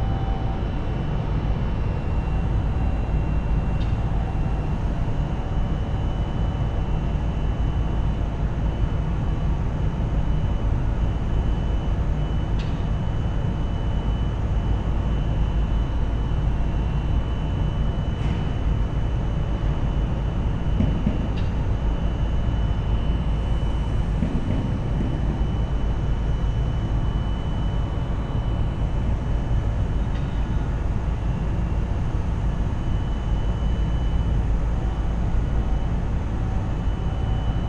Kidricevo, Slovenia - factory complex ambiance
sitting by railway tracks as darkness fell, watching across the way as a tanker truck emptied its contents at an adjacent building.
Kidričevo, Slovenia